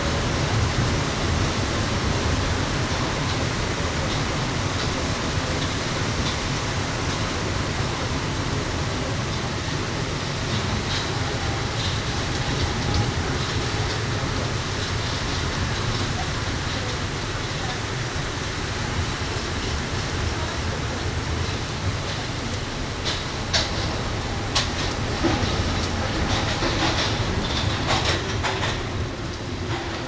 Metro trip from Republique to Rambuteau, Paris
Metro trip from Republique to Rambuteau. Some wind. Binaural recording.